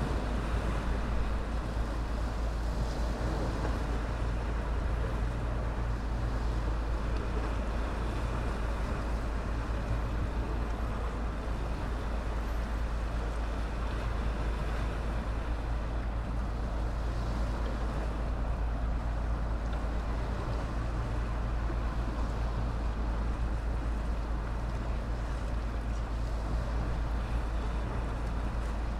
from/behind window, Novigrad, Croatia - winter morning
winter sound scape, waves, seagulls, fishing boats, masts
28 December